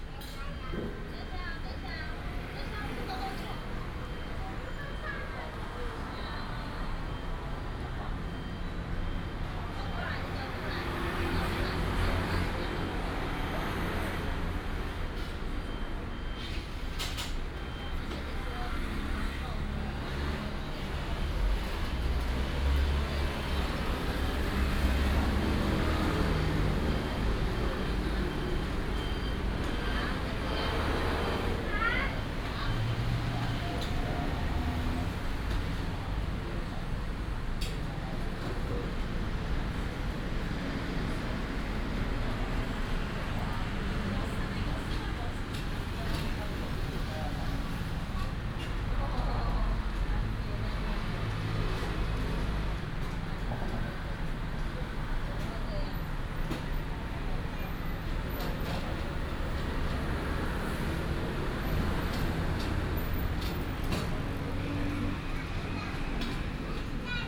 Boguan Rd., North Dist., Taichung City - At the junction of the snack bar
At the junction of the snack bar, Traffic sound
22 March 2017, 13:53